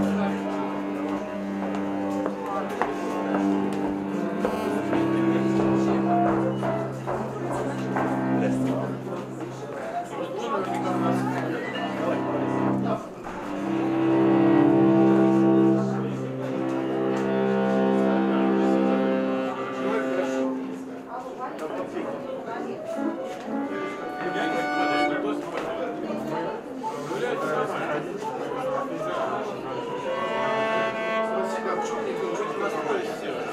Backstage, Musicians rehearse, People talk